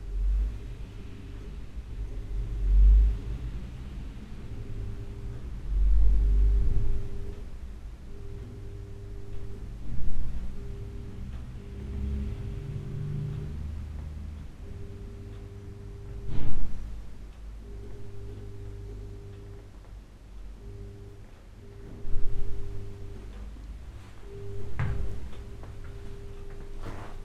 Quiet atmosphere of an old House, avenue Galliéni, Saint-Girons, France - Quiet atmosphere in a bathroom in an old house
Atmosphere in a bathroom of an old house, in 6 avenue Galliéni, St Girons, France. Clocks, neon, cars and doors...